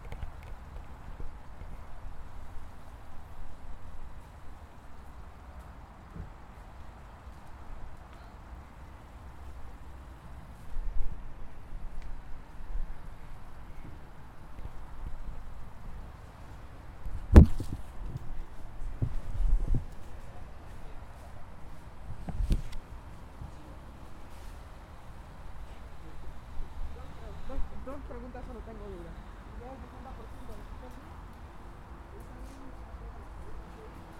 Calle Einstein, Madrid, España - Parking
It was recorded at the university parking. We can hear at the beginning how someone closes the door of a car, the sound of traffic present throughout the recording and also other sounds like voices of people talking and the sound of stepping on the leaves since it was recorded on autumn and there were lots of fallen leaves.
Recorded with a Zoom H4n.
Madrid, Spain, 6 December 2018